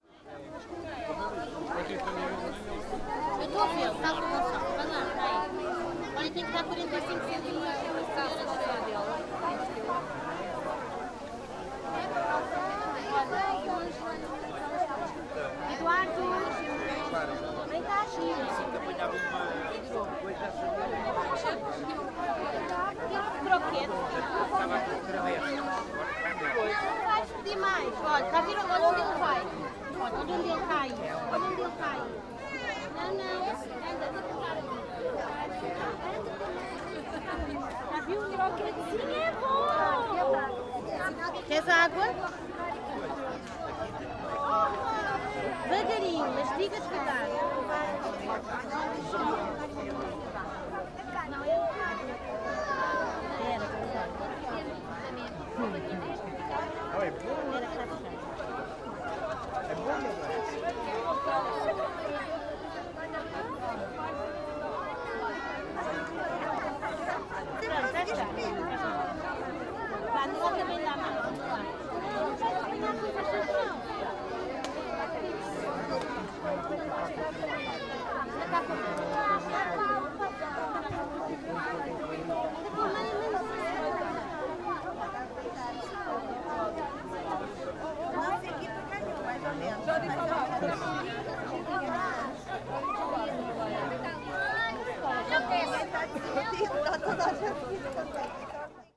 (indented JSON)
{
  "title": "lisbon goethe institut - children party",
  "date": "2010-07-02 18:00:00",
  "description": "party with many children in the garden of goethe institute.",
  "latitude": "38.72",
  "longitude": "-9.14",
  "altitude": "69",
  "timezone": "Europe/Lisbon"
}